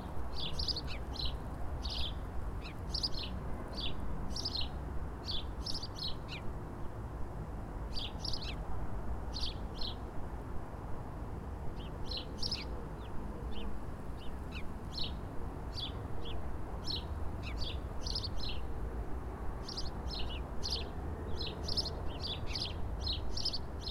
Spichrzowa, Gorzów Wielkopolski, Polska - Birds on the east boulevard
Birds around the east boulevard by the river.